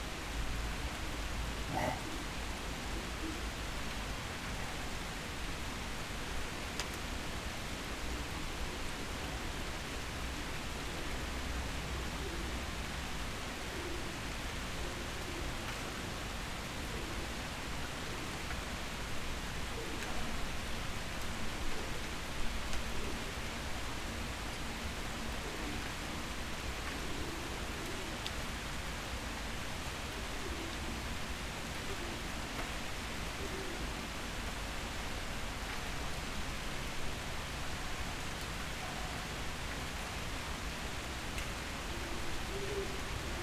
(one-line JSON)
{"title": "Road Václavice, Provodov-Šonov, Czechia - silnice první třídy Václavice", "date": "2022-08-01 17:02:00", "description": "Chůze lesem směrem k silnici a na křižovatku s pomníkem padlým vojákům 1866", "latitude": "50.39", "longitude": "16.14", "altitude": "437", "timezone": "Europe/Prague"}